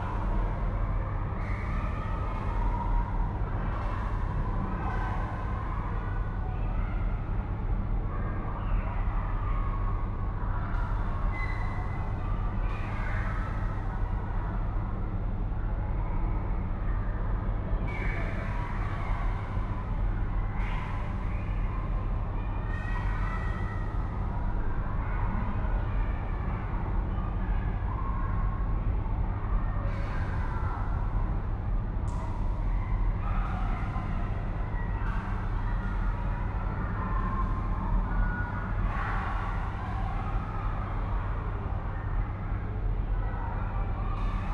Lörick, Düsseldorf, Deutschland - Düsseldorf, GGS Lörick, gym hall
Inside the gym hall of an elementary school during a school break. The sound of the childrens voices reverbing in the empty space with the soft humming of the ventilation and some clicks from the neon lights. To the end some distant attacks at the window and wooden door and the ringing of the gym's door bell.
This recording is part of the intermedia sound art exhibition project - sonic states
soundmap nrw -topographic field recordings, social ambiences and art places